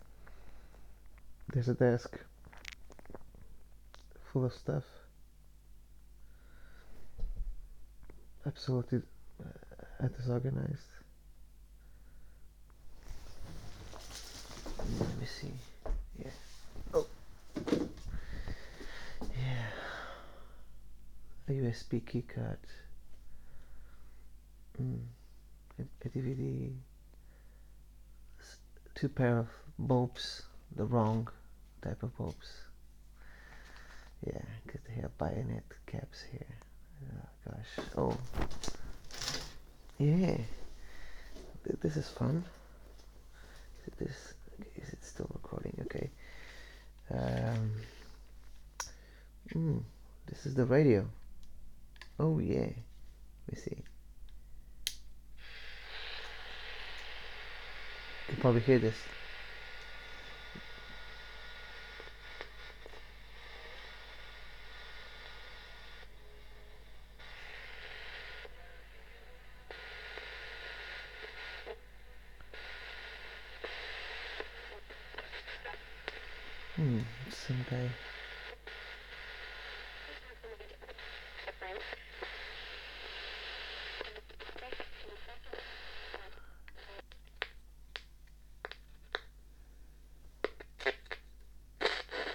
Hatfield Street
Listen to this, while you are walking to somewhere nice.
United Kingdom, European Union, 16 May 2010